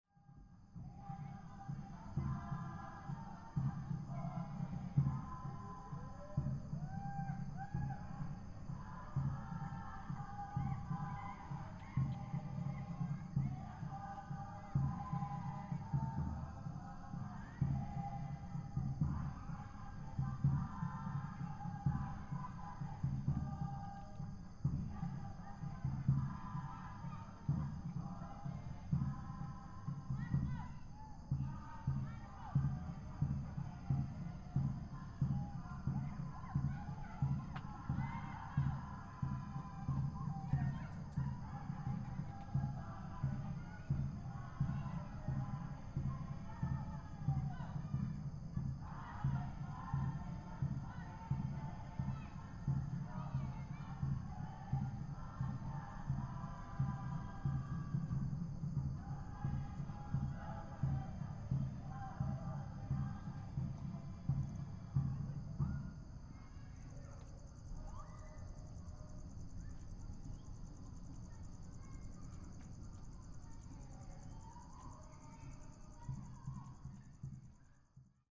Tengatangi, Atiu Island, Cookinseln - Evening in the village.
People singing and drumming somewhere in the village. Recorded with a Sound Devices 702 field recorder and a modified Crown - SASS setup incorporating two Sennheiser mkh 20 microphones.
Kūki Āirani